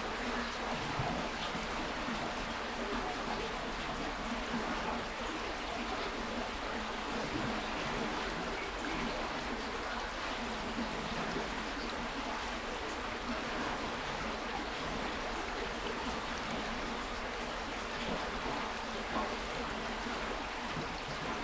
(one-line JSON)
{"title": "Panke, Schönerlinder Weg, Karow, Berlin - Panke river sound a bridge", "date": "2019-02-02 14:50:00", "description": "Berlin Karow, Panke river, water flow at/under bridge\n(Sony PCM D50, DPA4060)", "latitude": "52.62", "longitude": "13.47", "altitude": "51", "timezone": "Europe/Berlin"}